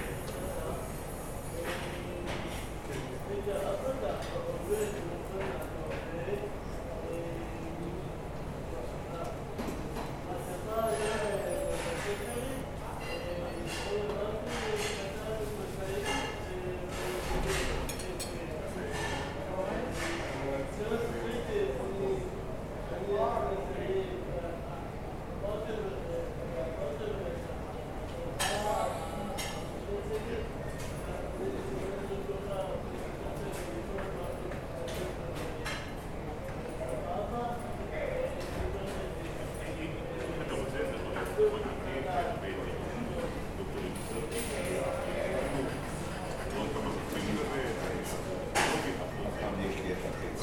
Frank Sinatra Restaurant at the Hebrew University